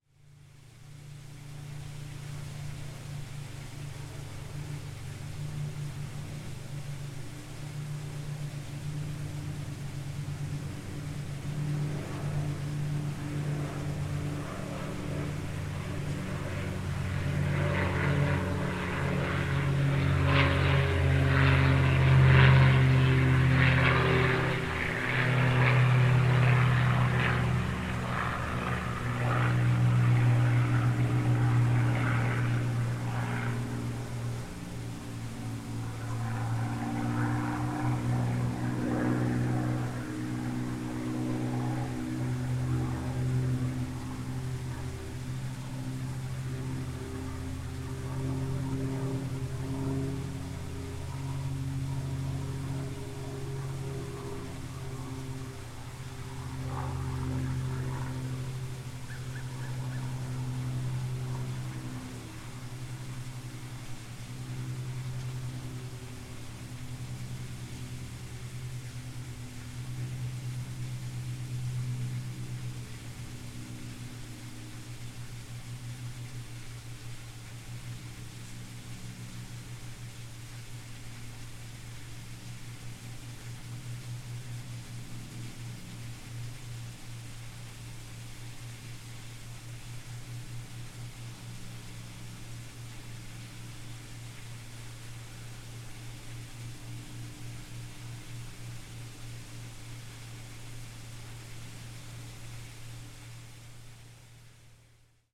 Crystal Bridges Museum of American Art, Bentonville, Arkansas, USA - Crystal Bridges Pond
Sound of the labyrinth weir system emptying into a pond at Crystal Bridges Museum of American Art interrupted by the sound of a plane.
Arkansas, United States, 8 October 2021, ~18:00